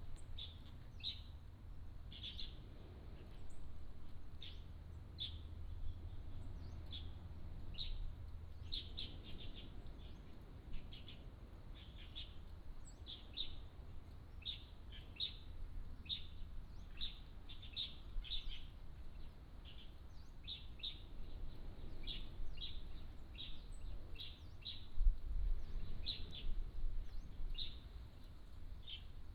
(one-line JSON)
{"title": "大澳山戰爭和平紀念公園, Beigan Township - Birds singing", "date": "2014-10-15 13:37:00", "description": "Birds singing, Sound of the waves", "latitude": "26.22", "longitude": "120.01", "altitude": "39", "timezone": "Asia/Taipei"}